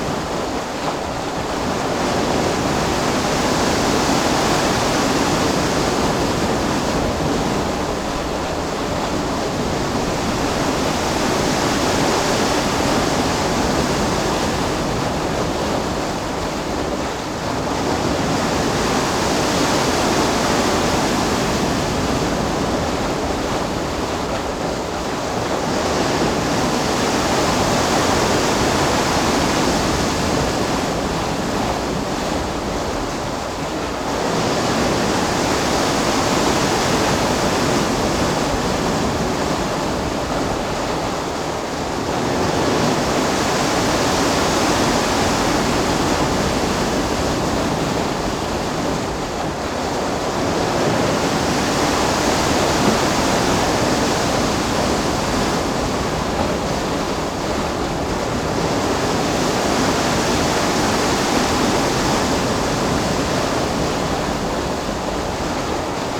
Bourg-l'Évêque - La Touche - Moulin du Comte, Rennes, France - Ecluse du canal
De temps à autre, l'écluse est ouverte de telle manière qu'elle fait le bruit de la marée.
From time to time, the lock is opened in such a way that it sounds like the flow and ebb of the tide.